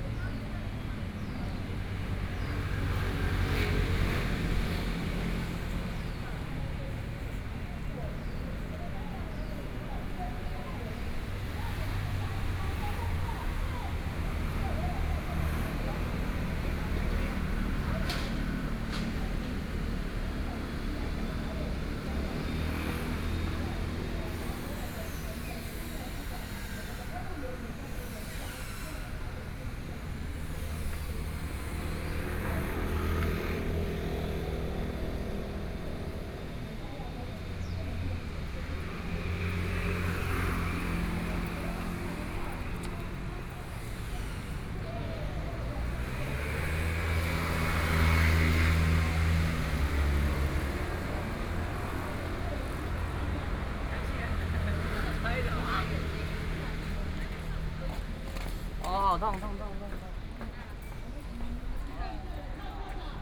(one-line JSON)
{"title": "內湖區湖濱里, Taipei City - Walk in the park", "date": "2014-05-04 10:09:00", "description": "Walk in the park, Walking along the lakeTraffic Sound", "latitude": "25.08", "longitude": "121.58", "altitude": "5", "timezone": "Asia/Taipei"}